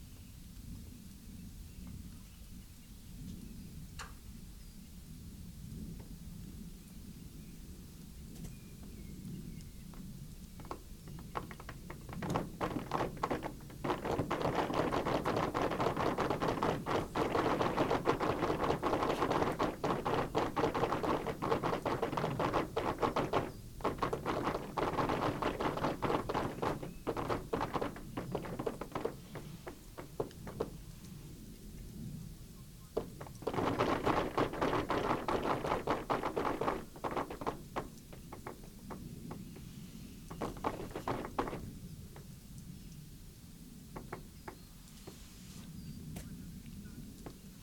MXHX+JM Montcel, France - Avalanche
Départ des pistes de ski de fond de Crolles sur le plateau du Revard, suspens près d'un toit, la neige glisse lentement, tombera, tombera pas? puis baouf!